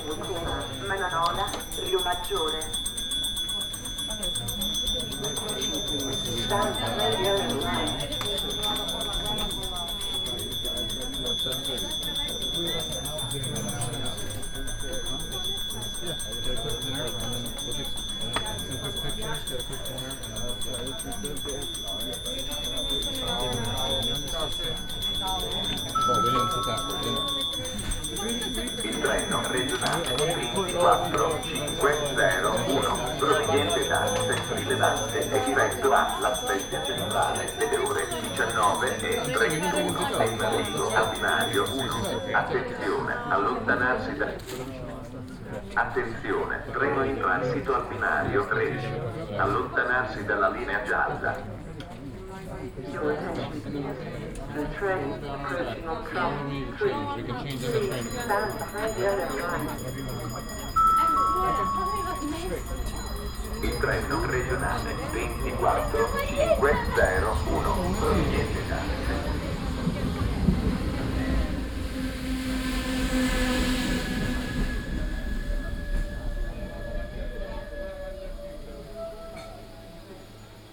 Corniglia, train station - electric bells
(binaural). two little electric bells attached to the front of the train station, ringing for no particular reason. at first I thought they ring when a train arrives at the station but then I noticed them ringing for long minutes even if no train was coming.
3 September 2014, 19:28